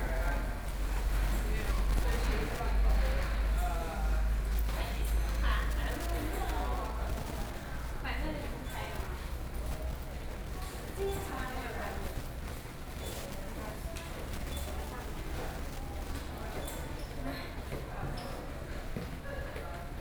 Puxin Station - Station hall
in the Station hall, Sony PCM D50+ Soundman OKM II
Taoyuan County, Taiwan, August 2013